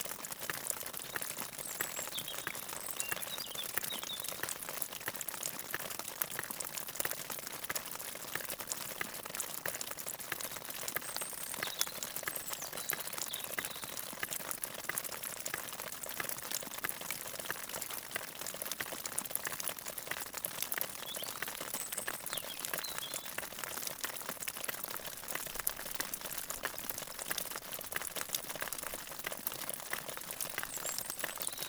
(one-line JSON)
{"title": "Pourcharesses, France - Frozen stream", "date": "2016-04-28 06:10:00", "description": "The Lozere Mounts. Early on the morning, slowly the sun is awakening. I'm shivering because of cold. This is a small stream, with a large part completely frozen.", "latitude": "44.40", "longitude": "3.86", "altitude": "1487", "timezone": "Europe/Paris"}